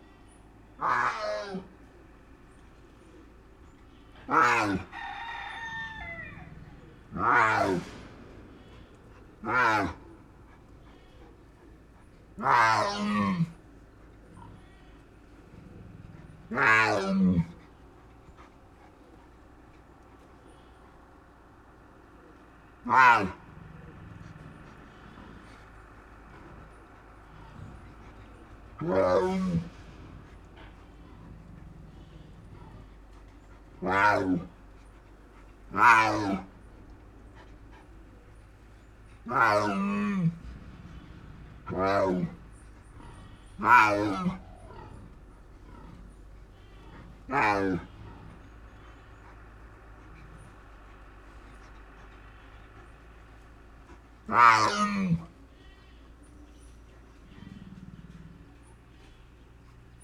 SBG, El Petit Zoo den Pere - Rita
La tigresa Rita se muestra algo celosa cuando alguien visita a su vecino Tipsy, el león, e intenta llamar la atención.